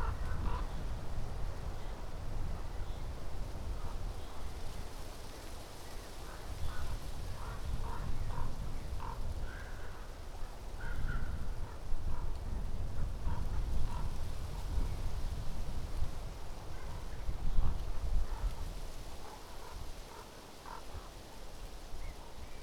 ravens patrolling the area near city garbage dump site, great reverbs despite strong wind, one of the tress rattles forcefully as wind gains strength
Polska, European Union, April 2013